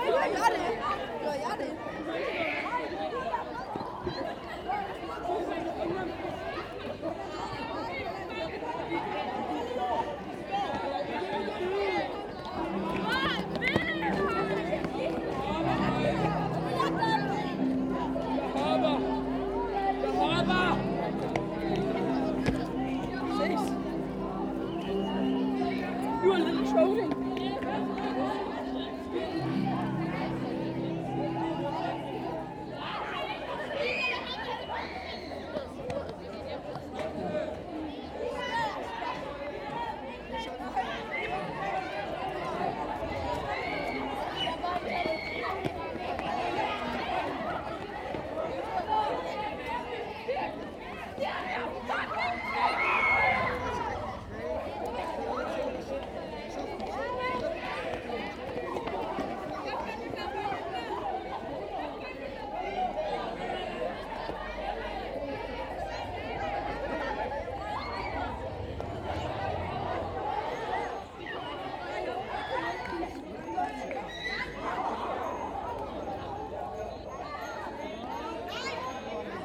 Teenagers being teenagers. Sometimes playing the tuning fork sculptures, which are a sound installation in the big square.
29 September 2022, 19:07